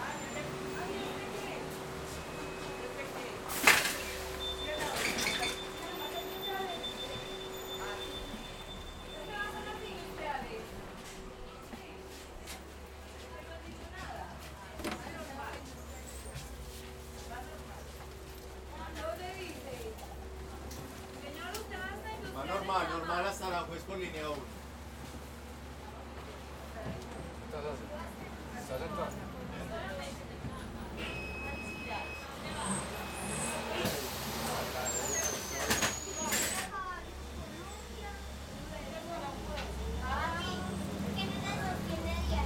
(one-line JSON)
{"title": "Medellín, Belén, Medellín, Antioquia, Colombia - MetroPlus, recorrido estacion Los Alpes - La Palma.", "date": "2022-09-17 05:00:00", "description": "Es un paisaje muy contaminado auditivamente, donde hace alarde el constante bullicio humano y la maquinaria destinada para el transporte. Lo cual opaca casi totalmente la presencia de lo natural y se yuxtapone el constante contaminante transitar humano.", "latitude": "6.23", "longitude": "-75.60", "altitude": "1528", "timezone": "America/Bogota"}